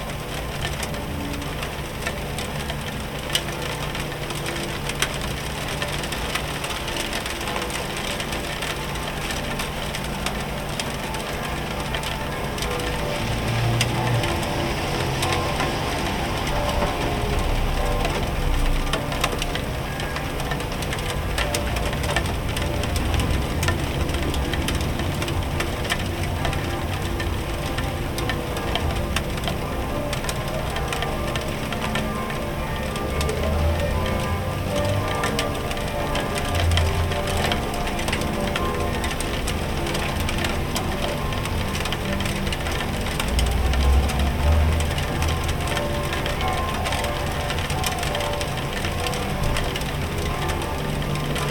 Christmas Church Bells, Icy Sharp Rain, Pigeons on the Roof & The City Sounds - IN THE ATTIC DURING THE CHRISTMAS DAY
During this Christmas Day I wanted to record the Christmas Church Bells and the Rain simultaneously! I was very lucky today & I made a great "AMBISONICS RECORDING" of the Christmas Church Bells, Icy Sharp Rain, Pigeons and Sounds From The Streets. A nice long session of Christmas Church Bells (ca. 10 mins) made a great atmosphere recording. 1km distant Church Bells sounded very nice today, maybe also because of the Icy & Sharp Rain!
Landkreis Hameln-Pyrmont, Niedersachsen, Deutschland, 24 December 2021, 14:24